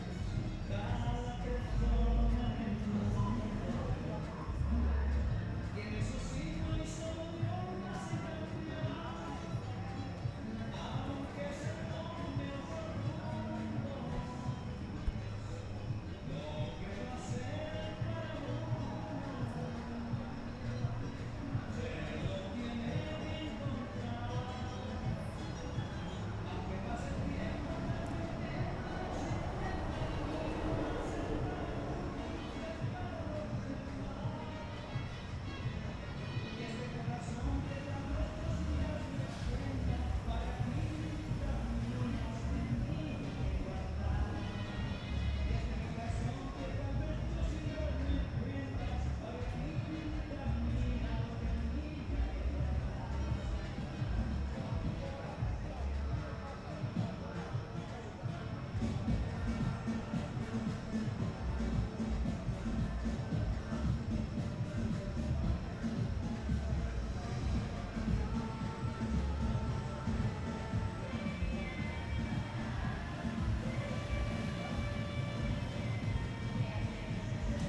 Cra. 83b ### 29a - 40, Medellín, Belén, Medellín, Antioquia, Colombia - Exterior de cancha de arena Los Alpes
Toma de audio / Paisaje sonoro grabado con la grabadora Zoom H6 y el micrófono XY a 120° de apertura en horas de la noche. Se puede percibir el sonido de la música de un bar cercano al punto de grabación, algunas personas hablando y el motor de un coche que se enciende y se pone en marcha a pocos metros del punto de grabación.
Grabador: Andrés Mauricio Escobar
Sonido tónico: Música de bar cercano
Señal Sonora: Alarma y encendido de automóvil